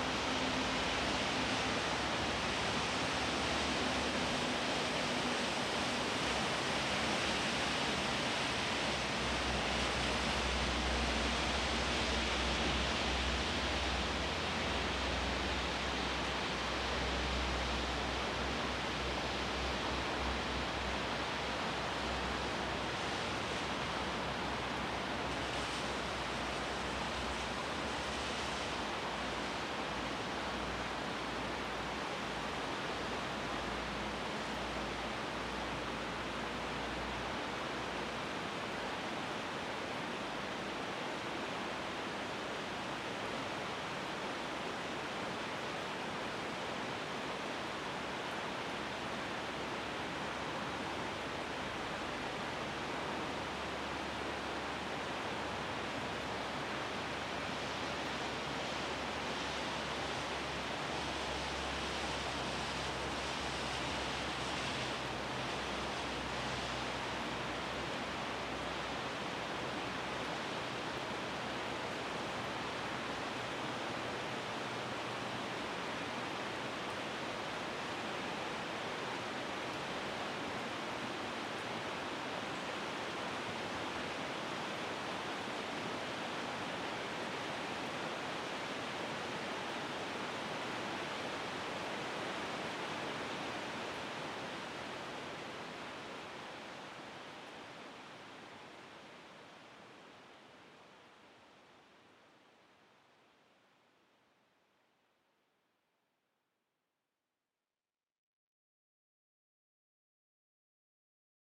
Entrevaux, Frankreich - Pigeonnier, Entrevaux, Alpes-de-Haute-Provence - Early morning ambience, sound of the river Var
Pigeonnier, Entrevaux, Alpes-de-Haute-Provence - Early morning ambience, sound of the river Var.
[Hi-MD-recorder Sony MZ-NH900, Beyerdynamic MCE 82]